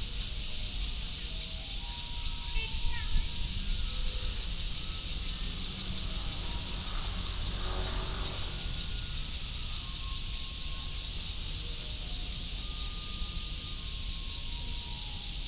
甲蟲公園, Zhongli Dist., Taoyuan City - in the Park
in the Park, traffic sound, Cicadas, Garbage clearance time, Binaural recordings, Sony PCM D100+ Soundman OKM II